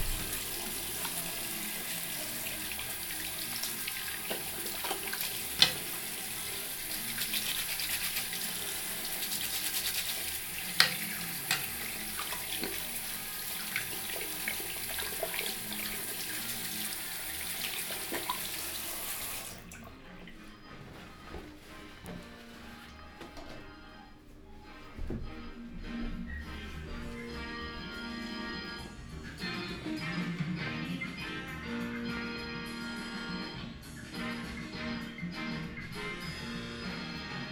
Ascolto il tuo cuore, città. I listen to your heart, city. Several Chapters **SCROLL DOWN FOR ALL RECORDINGS - “La flânerie après quatre mois aux temps du COVID19”: Soundwalk
“La flânerie après quatre mois aux temps du COVID19”: Soundwalk
Chapter CXVI of Ascolto il tuo cuore, città. I listen to your heart, city
Friday, July 10th, 2020. Walking in the movida district of San Salvario, Turin; four months after the first soundwalk during the night of closure by the law of all the public places (at 6 p.m.: March, 10th) due to the epidemic of COVID19.
Start at 10:21 p.m., end at h. 10:59 p.m. duration of recording 38’19''
As binaural recording is suggested headphones listening.
The entire path is associated with a synchronized GPS track recorded in the (kml, gpx, kmz) files downloadable here:
Go to Chapter I, March 10th start at 7:31 p.m., end at h. 8:13 p.m. duration of recording 40'45''. Different hour but same sun-time as on March 10th sunset was at 6:27 p.m., today, July 10th is at 9:17 p.m.
Piemonte, Italia